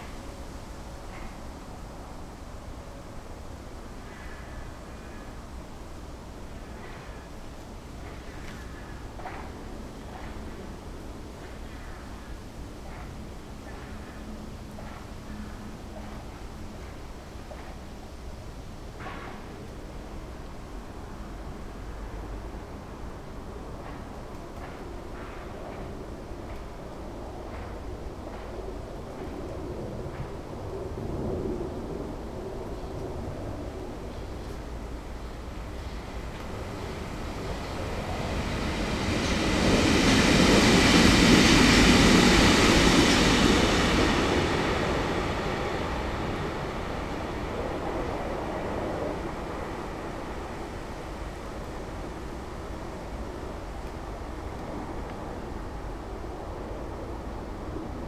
berlin wall of sound - tramfabrik near nordgraben. submitted by j.dickens & f.bogdanowitz 310809